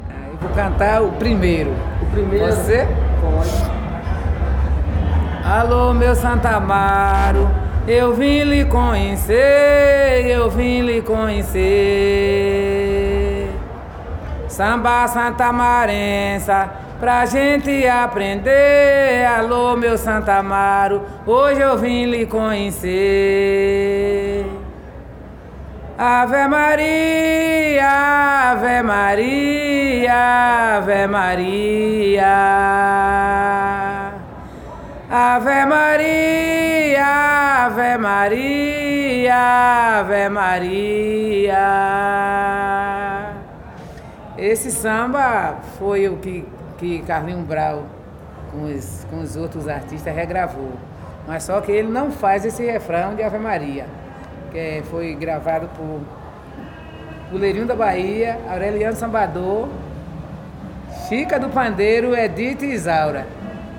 Ladeira do Carmo, Salvador - BA, Brasil - Samba da Dona Chica
Atividade requisitada para a disciplina de Sonorização, ministrada pela professora Marina Mapurunga, do curso de cinema e audiovisual da Universidade Federal do Recôncavo da Bahia (UFRB). Audio captado no Museu Casa do Benin.